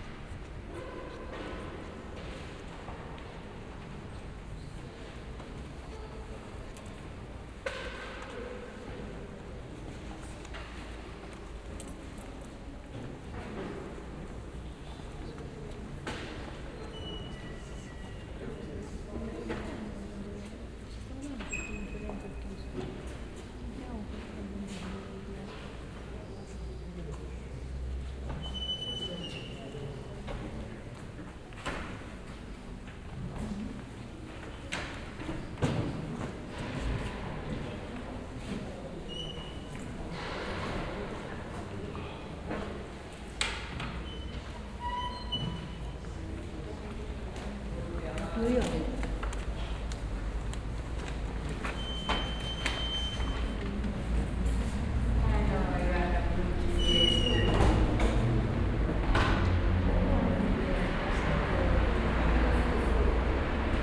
Berlin Marienkirche - church ambience
Marienkirche, church, ambience saturday afternoon, open for the public. binaural recording
11 September 2010, ~17:00